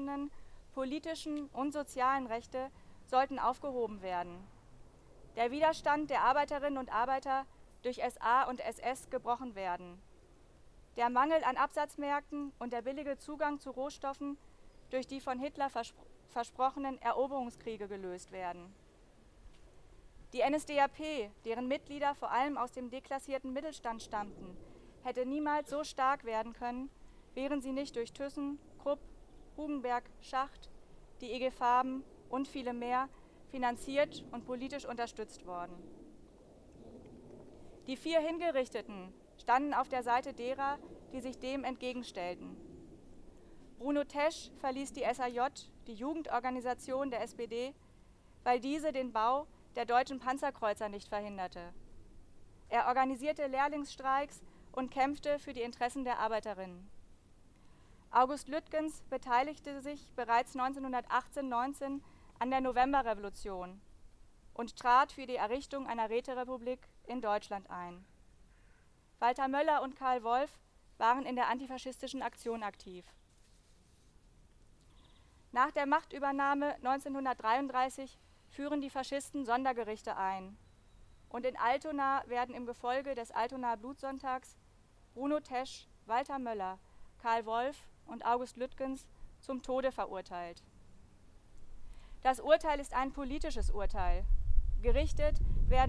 {
  "title": "Altonaer Blutsonntag - Gedenktag Justizopfer Altonaer Blutsonntag, 01.08.2009. Teil 2",
  "date": "2009-08-01 15:00:00",
  "description": "Rede von Nicole Drücker, Mitglied der VVN-BdA",
  "latitude": "53.56",
  "longitude": "9.94",
  "altitude": "26",
  "timezone": "Europe/Berlin"
}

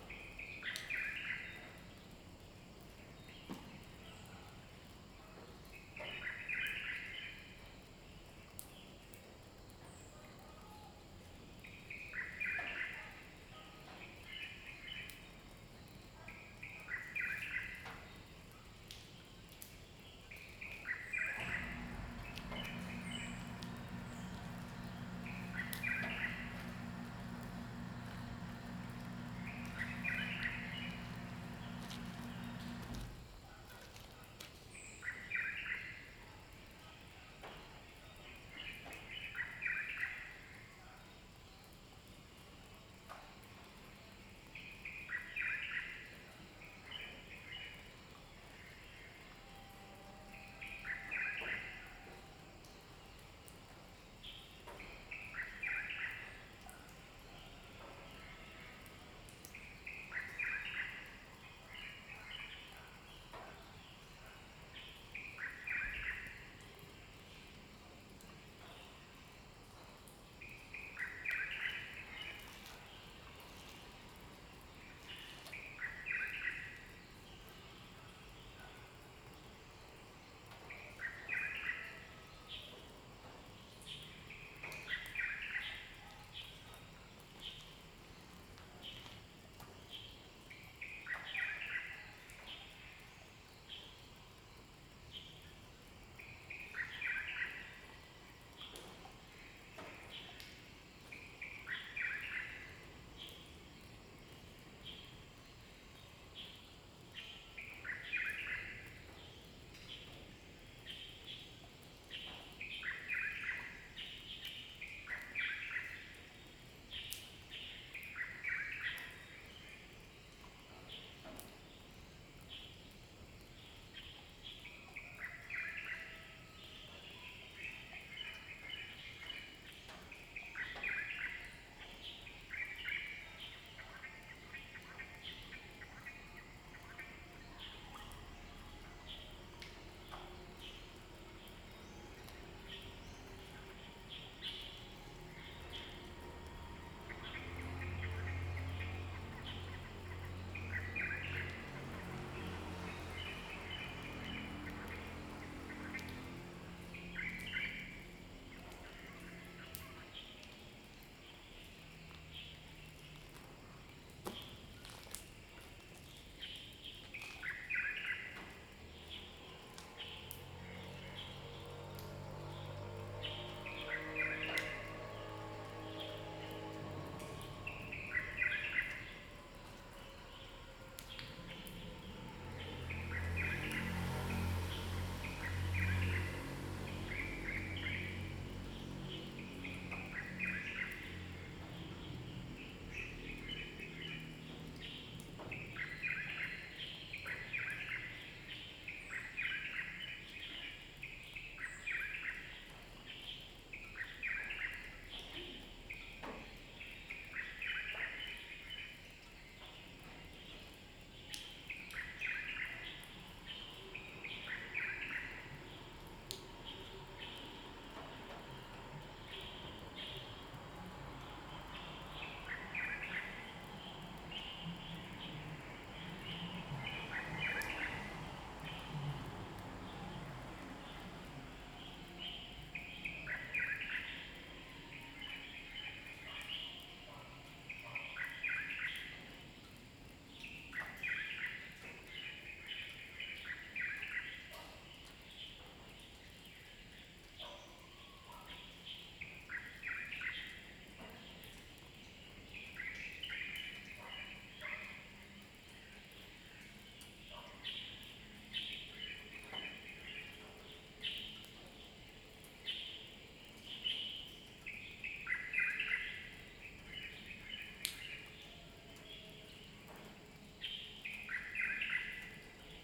{
  "title": "介達國小, 金峰鄉東64鄉道, Taiwan - Morning in school",
  "date": "2018-03-15 06:08:00",
  "description": "Morning in school, Bird cry, Dog barking, Water droplets, traffic sound, Pumping motor sound\nZoom H2n MS+XY",
  "latitude": "22.60",
  "longitude": "121.00",
  "altitude": "46",
  "timezone": "Asia/Taipei"
}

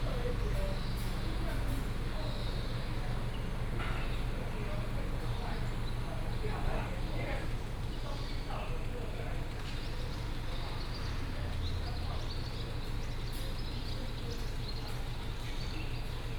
{
  "title": "Shuidui Rd., Wugu Dist. - Morning in the street",
  "date": "2017-05-06 06:01:00",
  "description": "Morning, in the street, Traffic sound, birds sound",
  "latitude": "25.07",
  "longitude": "121.43",
  "altitude": "23",
  "timezone": "Asia/Taipei"
}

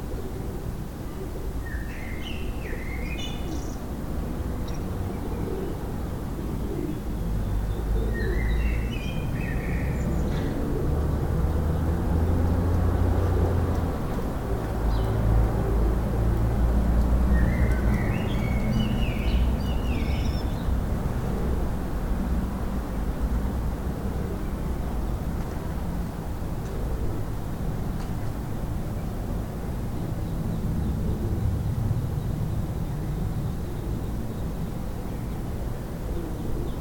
{
  "title": "Ein Tag an meinem Fenster - 2020-04-05",
  "date": "2020-04-05 11:48:00",
  "latitude": "48.61",
  "longitude": "9.84",
  "altitude": "467",
  "timezone": "Europe/Berlin"
}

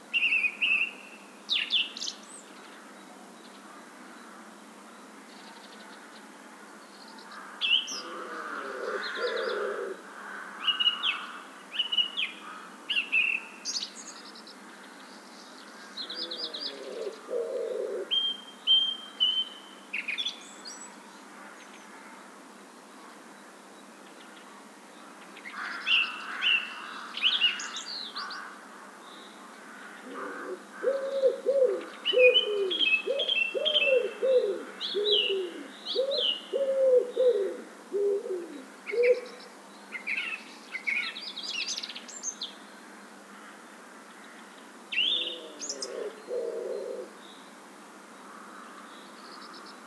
{
  "title": "Old military hospital, Przemyśl, Poland - (109 BI) Song thrush and city pigeon at the old hospital",
  "date": "2017-04-15 14:30:00",
  "description": "Birds (song thrush and city pigeon) chirping at the old (partly abandoned today) military hospital in Przemyśl.\nSound posted by Katarzyna Trzeciak.",
  "latitude": "49.78",
  "longitude": "22.78",
  "altitude": "226",
  "timezone": "Europe/Warsaw"
}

